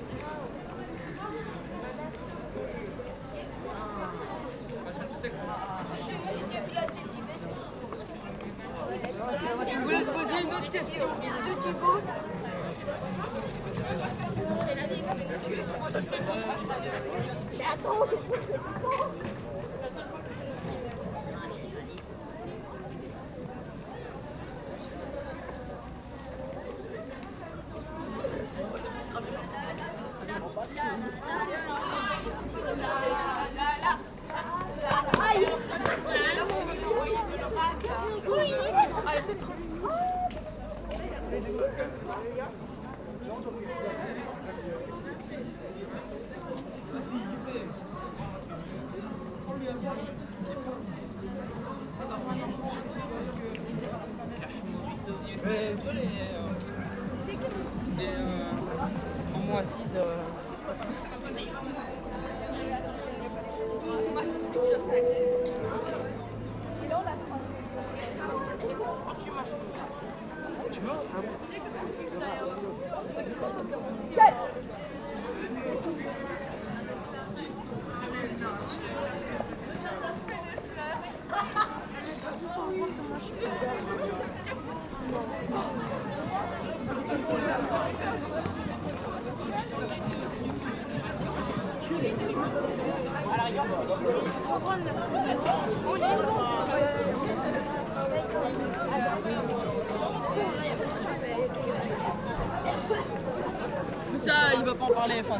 L'Hocaille, Ottignies-Louvain-la-Neuve, Belgique - St-Jean-Baptist walk
750 students of the St-Jean Baptist college went to see the film called "Tomorrow", about sustainable development. They walk back by feet, from Louvain-La-Neuve to Wavre (8 km). I follow them during a short time.
Ottignies-Louvain-la-Neuve, Belgium, 18 March 2016